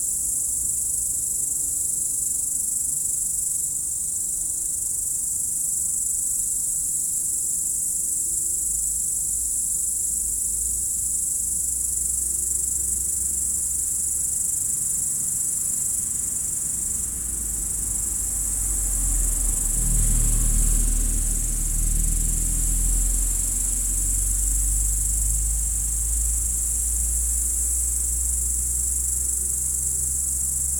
route du col du Chat, Bourdeau, France - Au crépuscule
Au bord de la route du col du Chat au dessus du lac du Bourget les insectes du talus, sauterelles vertes, passage d'une moto en descente et de voitures, la nuit arrive . enregistreur DAT Teac Tascam DAP1, extrait d'un CDR gravé en 2006 .
France métropolitaine, France, 9 September 2006, 20:00